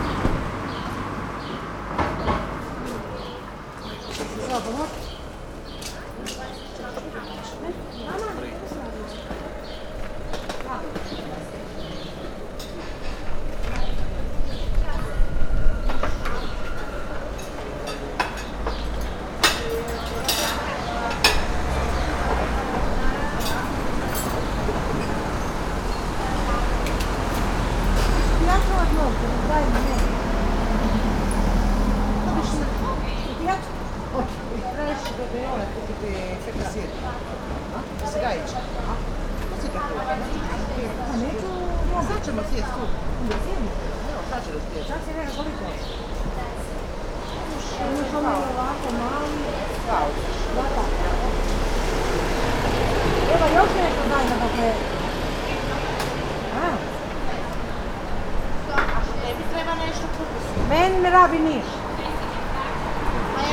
at the moment the most vivid area in small village
Višnjan, Croatia, 12 July 2014